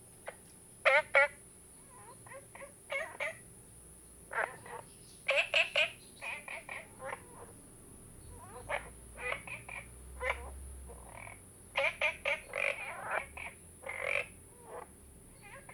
綠屋民宿, 桃米里 Taiwan - Frogs
Frogs chirping, Ecological pool
Zoom H2n MS+XY
Puli Township, Nantou County, Taiwan